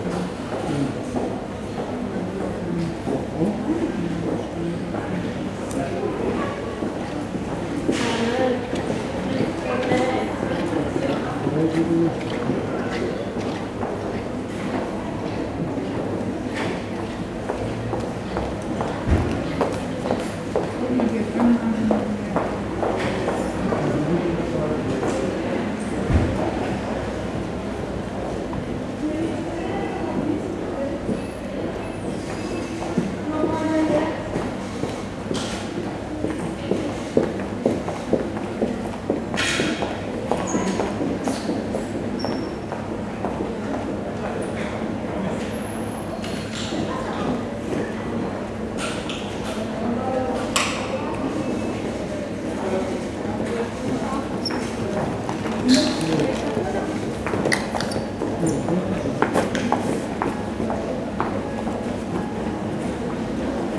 Lyon, Passage de l'Argue
Lyon, Passage de lArgue
Minidisc recording from 1999